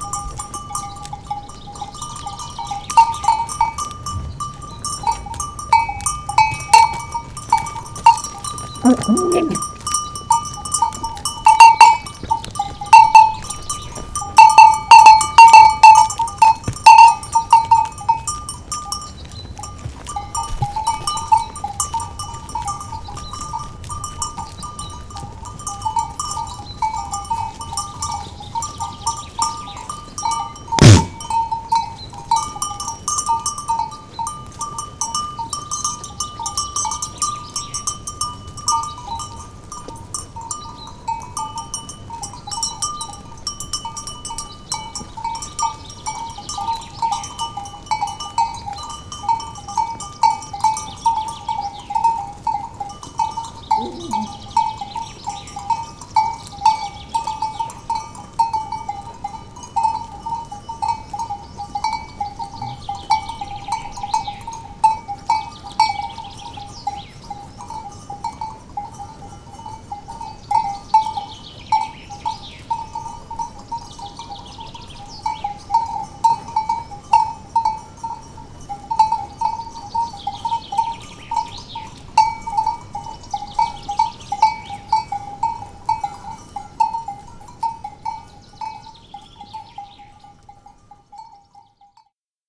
Duruelo de la Sierra, Soria, Spain - Castroviejo
Paisagem sonora de Castroviejo em Duruelo de la Sierra. Mapa Sonoro do Rio Douro. Castoviejo in Duruelo de La Sierra, Soria, Spain. Douro River Sound Map.
10 May 2013